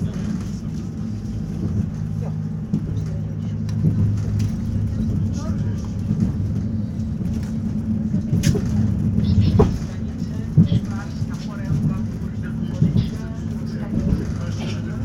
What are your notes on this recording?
Binaural recording of a train ride from Szklarska Poręba Huta -> Szklarska Poręba Górna. Recorded with DPA 4560 on Sound Devices MixPre-6 II.